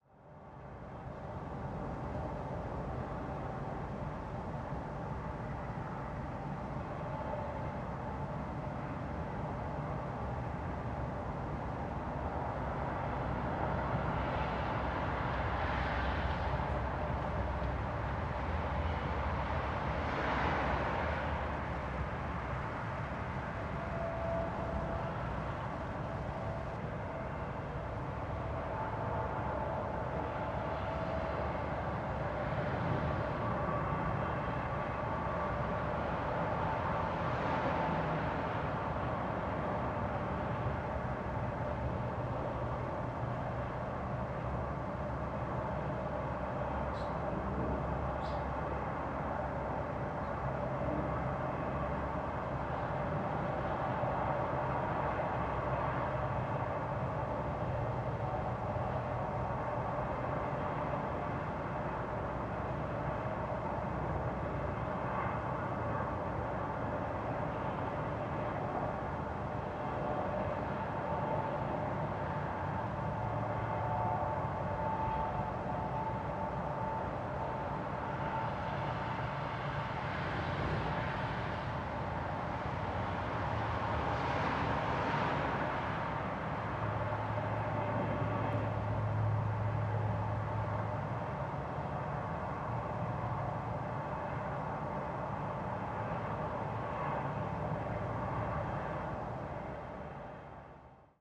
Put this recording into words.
ho appoggiato il registratore digitale sul davanzale della finestra e ho premuto rec, semplicemente :)